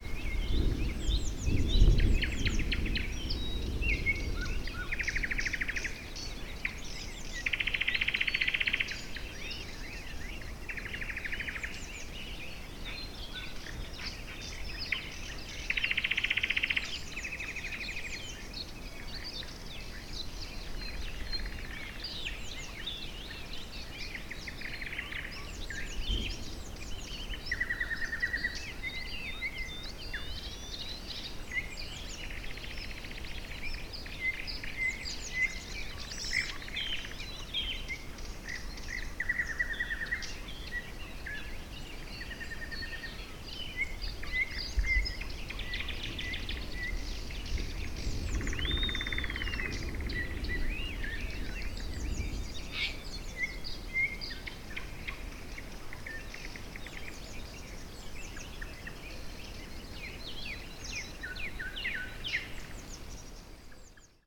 Chernobyl Zone, Ukraine - Malenki-Minki / Storm
the end of storm in the Chernobyl Zone.
Zoom H4, soundman